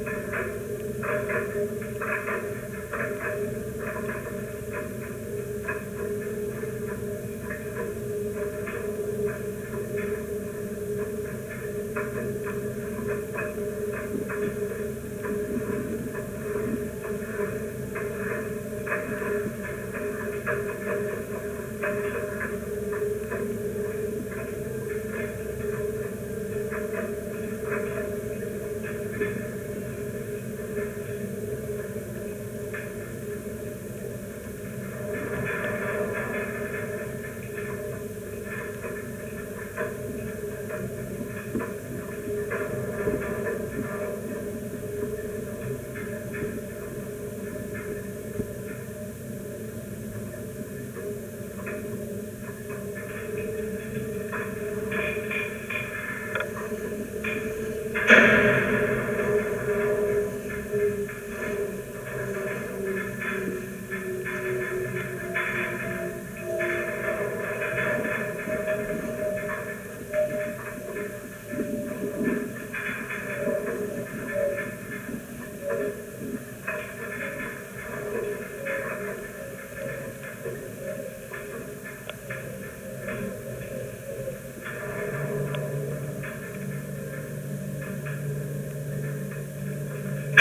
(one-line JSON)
{"title": "Grevenbroich, Germany - Green Rotation: the mechanics and musicality of a wind generator", "date": "2012-11-02 16:13:00", "description": "Recorded with a contact microphone this is the sound inside the metal tower of the wind generator. The wind is strong and the propeller at the top turns quite fast.", "latitude": "51.06", "longitude": "6.61", "altitude": "156", "timezone": "Europe/Berlin"}